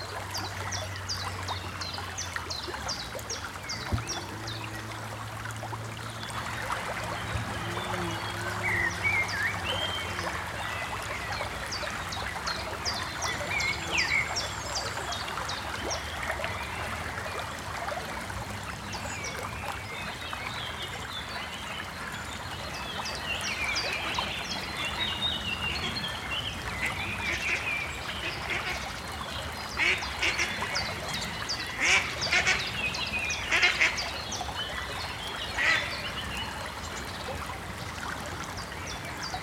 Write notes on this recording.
A sound walk from the city sounds recorded in Meersburg, the choir was recorded in the Basilik of Birnau and the nature noises were recorded in the forest around Deisendorf, Salem and Illmensee, recorded and edited By Maxime Quardon